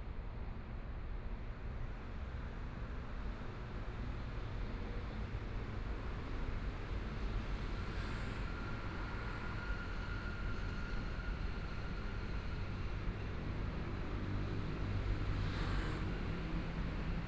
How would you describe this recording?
Night walk in the road, Traffic Sound, Please turn up the volume, Binaural recordings, Zoom H4n+ Soundman OKM II